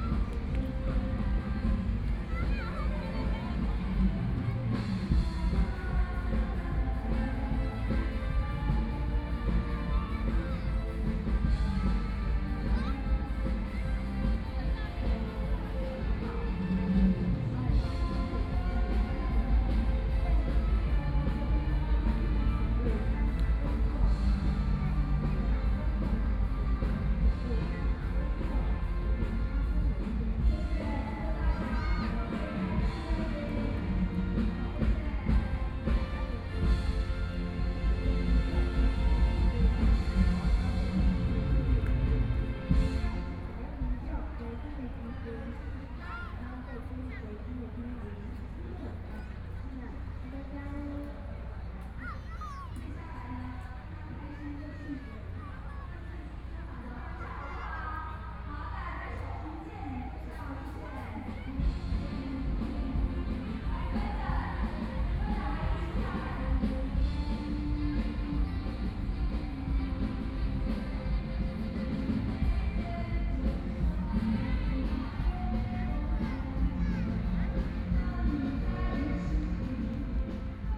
First Full Moon Festival, Aircraft flying through, A lot of tourists
Please turn up the volume
Binaural recordings, Zoom H4n+ Soundman OKM II
16 February 2014, 21:18